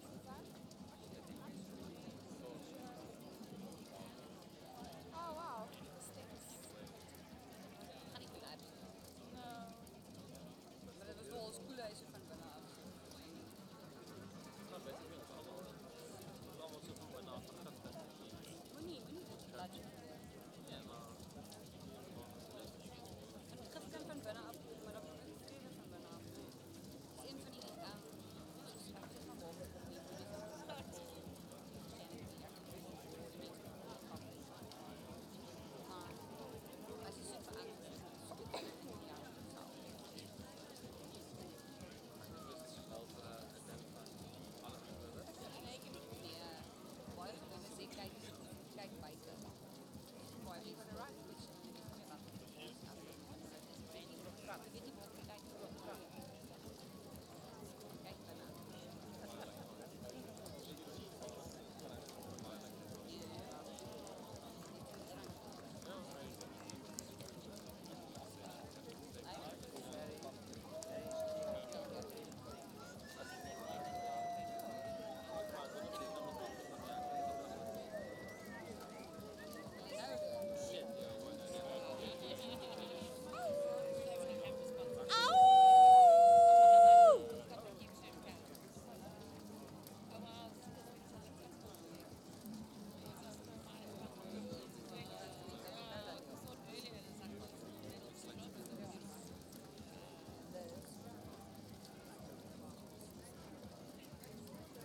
Tankwa Town, Northern Cape, South Africa - The Union Burn
Inner perimeter recording of the art piece Union being burned at Afrikaburn in 2019